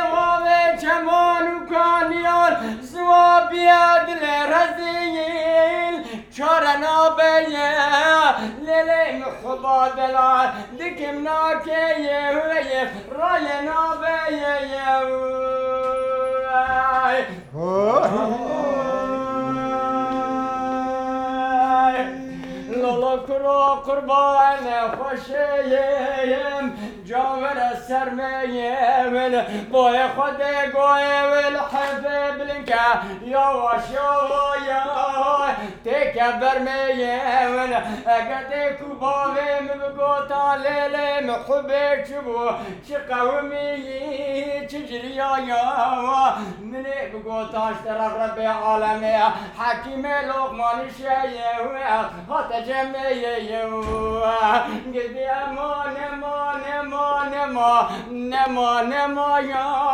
Ziya Gökalp, Kılıçı Sk., Sur/Diyarbakır, Turkey - Kurdish singers at the Dengbêj house, Diyarbakır, Turkey
Kurdish traditonal dengbêj singers recorded at the Dengbêj House (Dengbêj Evi), Diyarbakır, Turkey.
Güneydoğu Anadolu Bölgesi, Türkiye